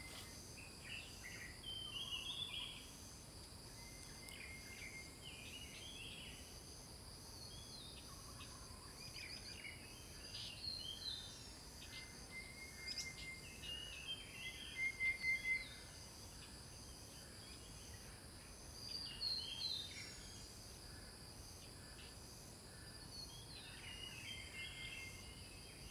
種瓜路, 桃米里, Puli Township - Birds sound
Birds called, Birds singing
Zoom H2n MS+XY
Puli Township, Nantou County, Taiwan, 6 May